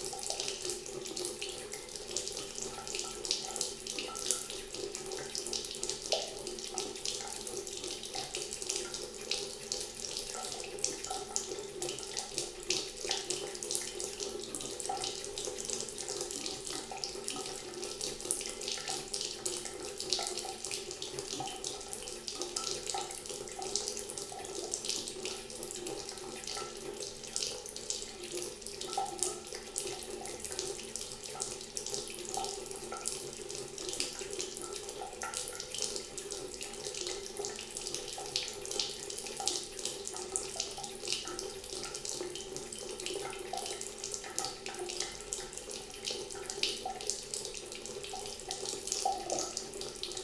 Ametisthorst, Den Haag, Nederland - Running watertab
Recorder with a Philips Voice Tracer DVT7500
Zuid-Holland, Nederland